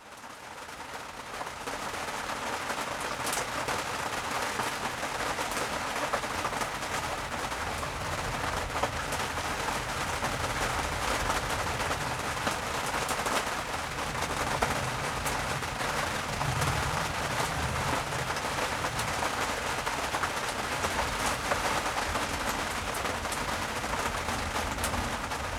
workum, het zool: marina, berth h - the city, the country & me: marina, aboard a sailing yacht
thunderstorm, heavy rain hits the tarp
the city, the country & me: june 28, 2011
Workum, The Netherlands, 28 June 2011, 21:54